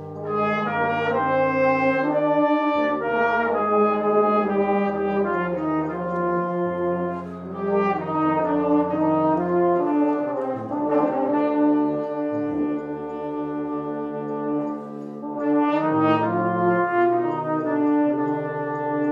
Mellbecks, Kirkby Stephen, UK - Band Practice
Kirkby Stephen Brass Band plays The Concierto de Aranjuez by Joaquín Rodrigo. This rehearsal wasn't the full band but has a lovely cornet solo.